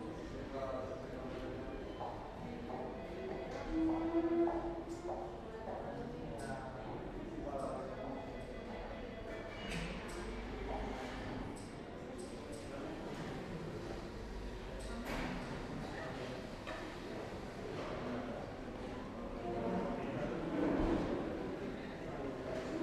hotel carlton hall dentrée
enregisté sur ares bb le 13 fevrier 2010